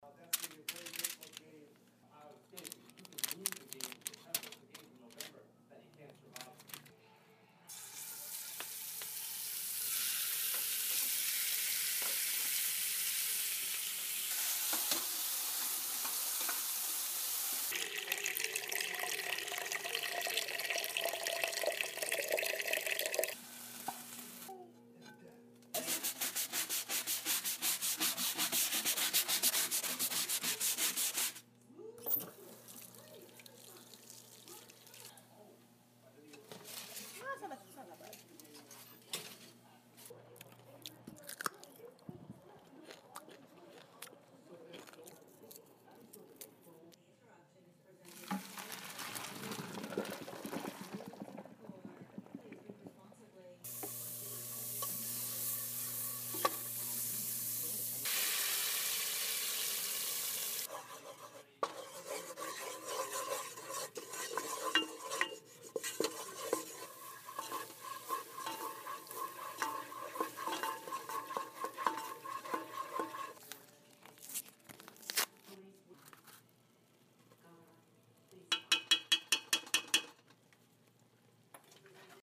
Ballantyne East, Charlotte, NC, USA - Audio Documentary, Sense of Places
Cooking with alison and making chicken pasta
16 September 2016